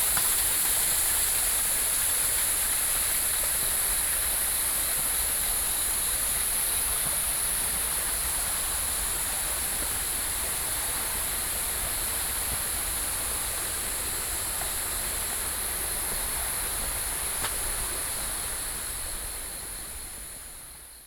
Tianmu, Shilin District - Hiking trails
walking in the Hiking trails, The sound of water, Cicadas, Frogs, Sony PCM D50 + Soundman OKM II
新北市 (New Taipei City), 中華民國, 23 June 2012